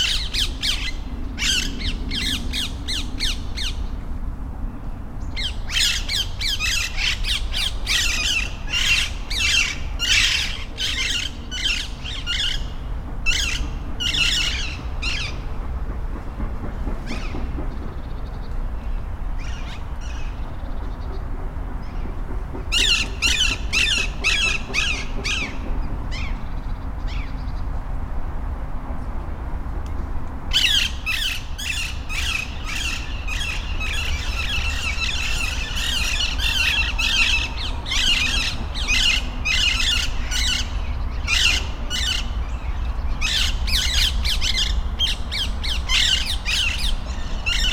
Cologne, Botanischer Garten/Flora, Deutschland - Parrot chat
A flock of free living, green parrots gets together on a tree in the botanical garden having a vivid chat. In the background construction works and traffic noise.